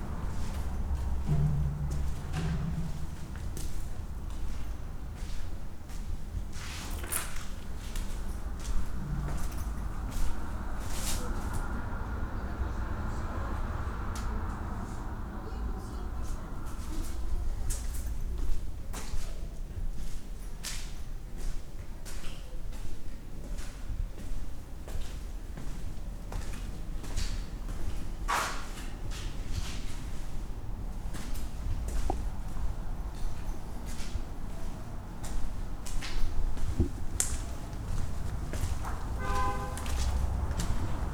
Avenida da Liberdade Fundão, Portugal - Cinema Gardunha
Sounds from an abandoned movie theater